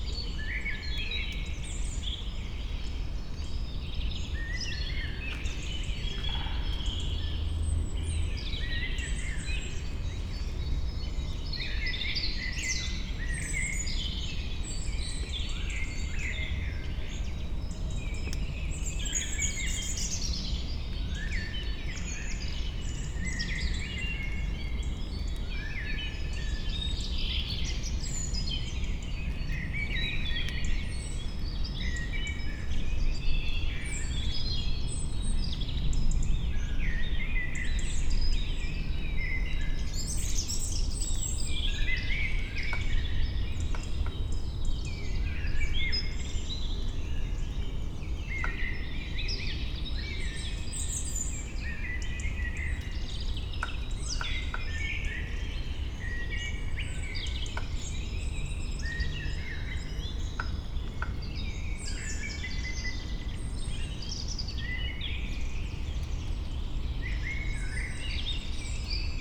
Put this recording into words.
early spring, cold and wet, evening forest ambience, woodpeckers, unavoidable aircraft. airtraffic has increased a lot in this area because of the near-by Frankfurt International airport about 80km away, planes are present all day and night. a pity and very unpleasant. (Sony PCM D50, Primo EM172)